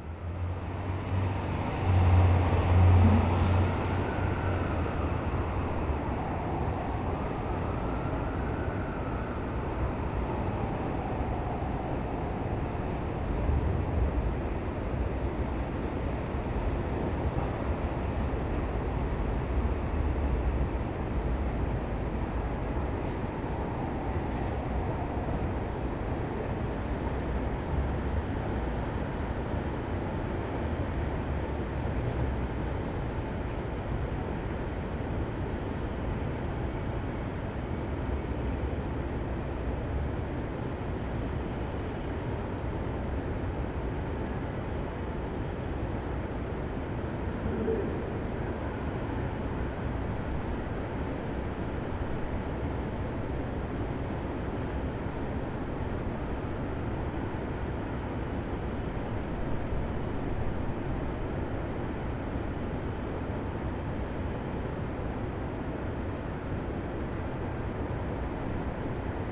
Midtown, New York, NY, USA - Arlo Hotel Room
Arlo NoMad 17th floor, inside the hotel room
hearing the sound of Manhattan up high
17 December 2016, ~13:00